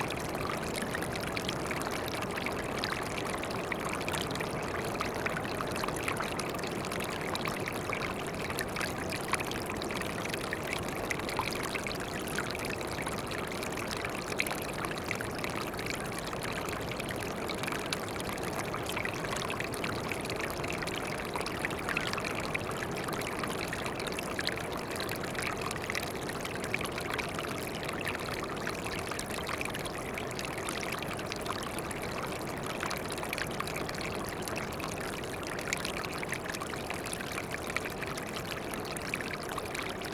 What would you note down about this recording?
Water running over a small ledge into a rock pool ... under Whitby East Cliffs ... open lavalier mics on mini tripod ... bird calls ... herring gull ...